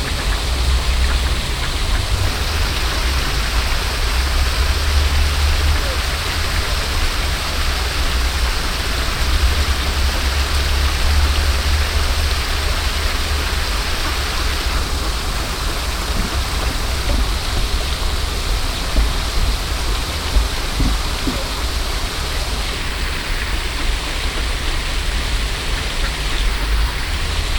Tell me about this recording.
Standing on a wooden bridge between two fountains. The sound of the water spraying and floating, nearby two old men feeding the ducks are talking, the ducks cackle two bicycles cross the wooden bridge. international city scapes - topographic field recordings and social ambiences